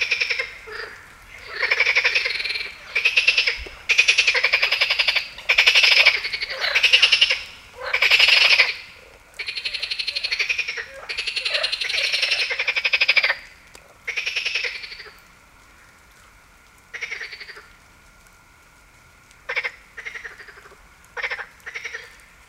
Heviz, Grenouille rieuse
Hungary, Heviz, frog
Kölcsey Ferenc St, Hungary, 21 April 2008, 22:22